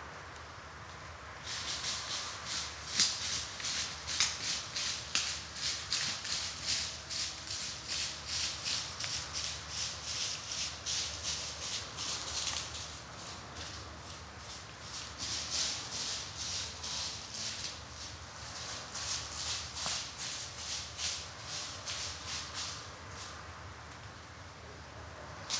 Shy deer is approaching the microphone, but then alerted, mostly very quiet recording BUT 0db peak at 25 - 40sec - BE AWARE
Overnightrecording with Zoom F4 - diy SASS with 2 PUI5024 omni condenser mics
Mecklenburg-Vorpommern, Deutschland, 21 June 2021